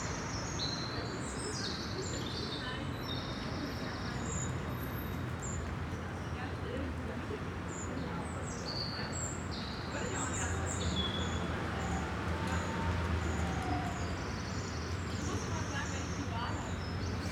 Isebek-Kanal, Kaiser-Friedrich-Ufer, Hamburg, Deutschland - canal ambience
Hamburg, Isebek-Kanal at Eimsbütteler Brücke, late morning in spring, ambience /w birds (Robin & others), waves of traffic from above, two paddlers
(Sony PCM D50, Primo EM272)